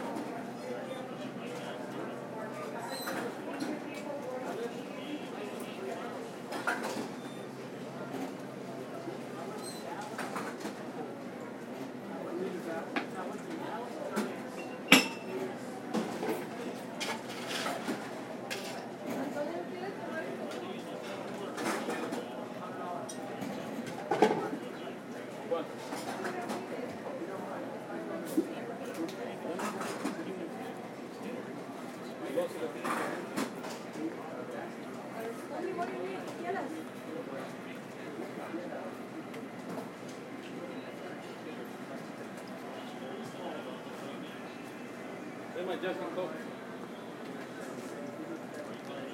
World Listening Day recording of the café ambience before boarding
IL, USA